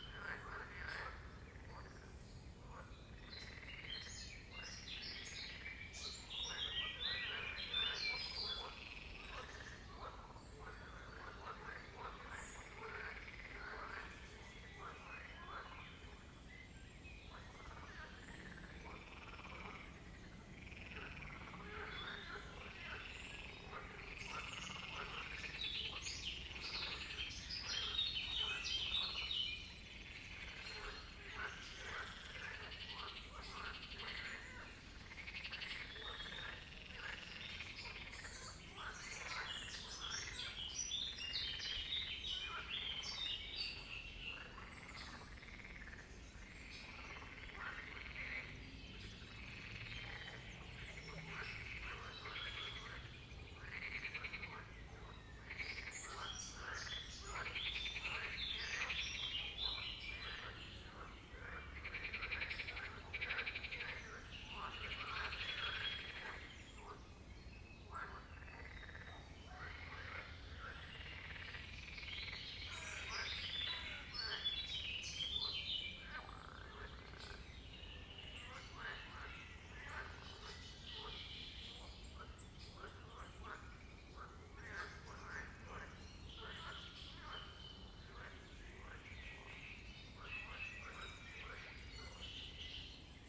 Beckerbruch Park, Dessau-Roßlau, Deutschland - Naturkulisse am Wallwitzsee
Vogelgesang und Froschquaken | birdsong and frog croaking